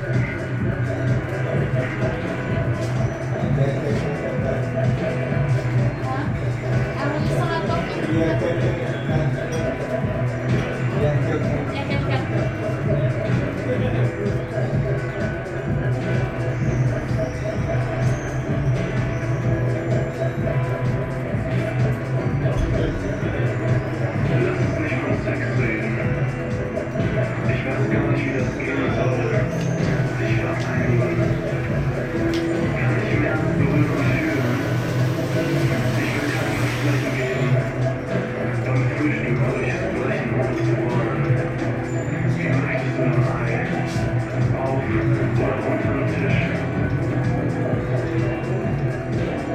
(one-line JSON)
{"title": "friedelstraße: der sturm - the city, the country & me: der sturm", "date": "2008-10-07 12:53:00", "description": "project room, bar \"der sturm\"\nthe city, the country & me: september 2008", "latitude": "52.49", "longitude": "13.43", "altitude": "46", "timezone": "Europe/Berlin"}